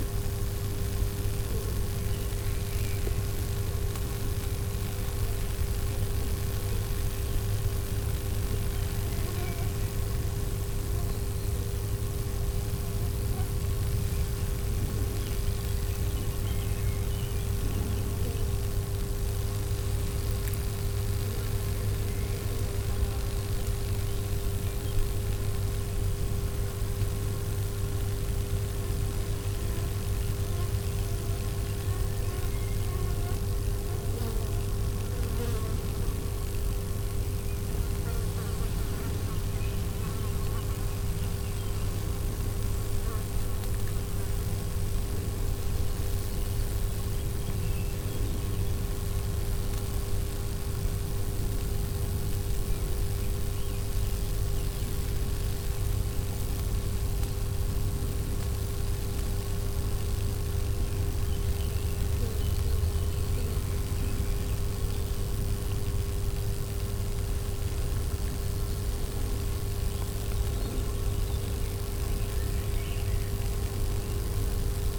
Courcelles, Belgique - Worrisome power station
Worrisome sound of a power station. Surprise at 2:40 mn, and apocalypse beginning at 3:43 mn !
Courcelles, Belgium, 3 June 2018, ~14:00